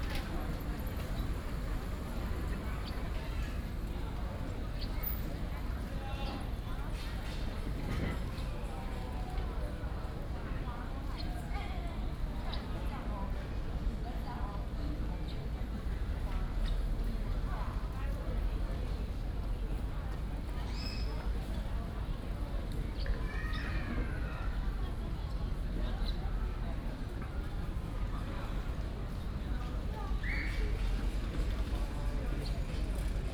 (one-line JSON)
{"title": "小小福, National Taiwan University - At the university", "date": "2016-03-04 17:23:00", "description": "At the university, Bicycle sound, Footsteps", "latitude": "25.02", "longitude": "121.54", "altitude": "18", "timezone": "Asia/Taipei"}